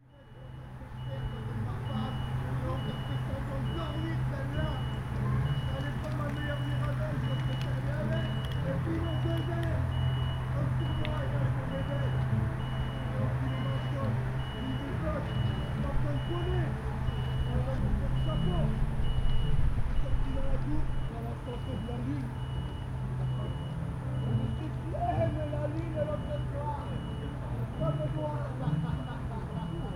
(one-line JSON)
{"title": "Parc du Heyritz, Chem. du Heyritz, Strasbourg, Frankreich - Street Theater", "date": "2021-08-29 18:00:00", "description": "Next to the lifting bridge. A boat passing through, hence the alarm- beep sounds of the moving bridge. A street theater group acting on the lawn nearby, coming to the end of their performance.", "latitude": "48.57", "longitude": "7.74", "altitude": "141", "timezone": "Europe/Paris"}